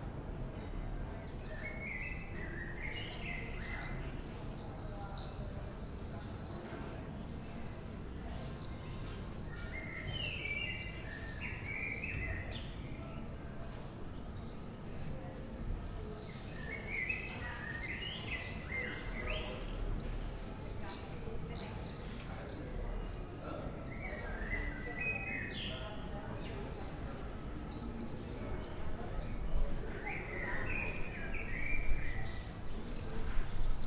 bonn altstadt, mauersegler - mauersegler im juli 2006
Bonn, Germany, 26 September